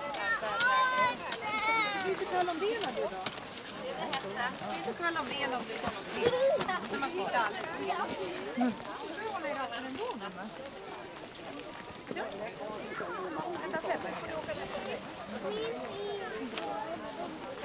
Gammlia, Umeå, Winter Fayre
Soundwalk through the stalls at the winter fayre, horse-drawn cart with bells, children, people greeting, brushing winter clothing. Temperature -11 degrees